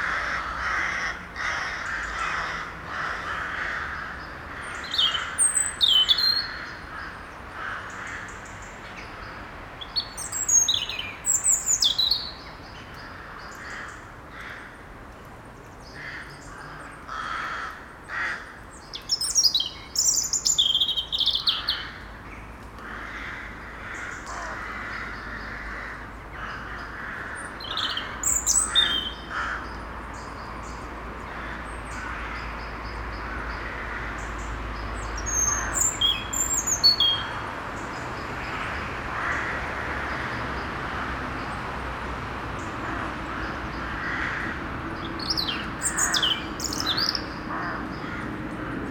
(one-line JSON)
{"title": "Maintenon, France - Crows war", "date": "2016-12-24 17:30:00", "description": "In this private wood, nobody is going and crows are living. Every evening, they talk about their day, it makes very noisy screams you can hear every winter early on the evening (something like 5 PM). I put a microphone in the forest and went alone in the kindergarden just near. At the beginning of the recording, a blackbird sing very near the recorder. A plane is passing by and after, the crows make war, as every evening. This is christmas and there's a lot of cars driving the small street called rue Thiers.", "latitude": "48.59", "longitude": "1.58", "altitude": "110", "timezone": "GMT+1"}